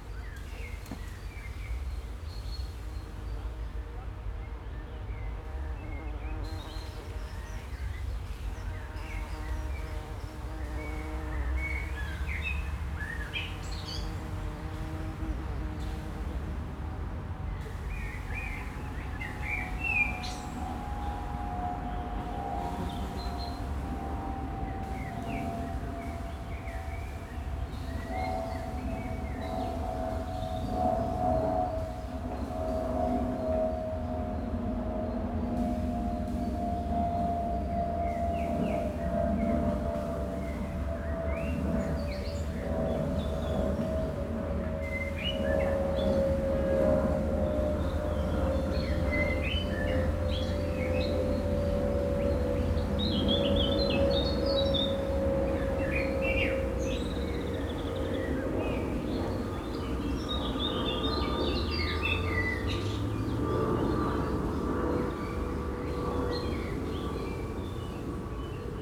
Schuir, Essen, Deutschland - essen, canola field, bees, birds and plane
An einem Rapsfeld an einem milden Frühlingsspätnachmittag. Der Klang von Bienen in den Rapsblüten, das Zwitschern von Vögeln und ein Flugzeugüberflug.
At a canola field on a mild late spring afternoon. The sound of bees inside the canola blossoms, the tweet of birds and a plane crossing the sky.
Projekt - Stadtklang//: Hörorte - topographic field recordings and social ambiences